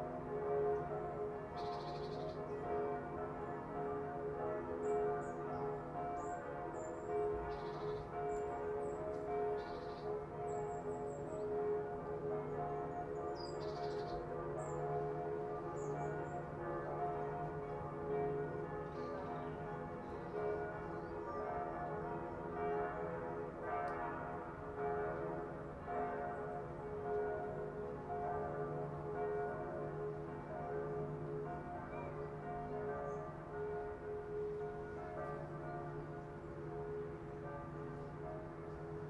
Vallendar, Deutschland - church bells in distance
TASCAM DR-100mkII with integrated Mics
Vallendar, Germany, 19 October 2014, 09:02